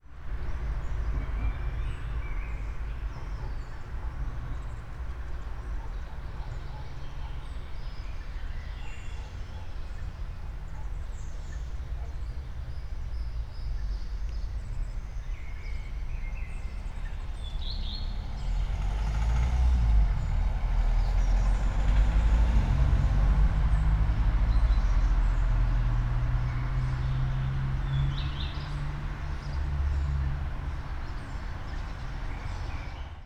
all the mornings of the ... - jun 23 2013 sunday 08:41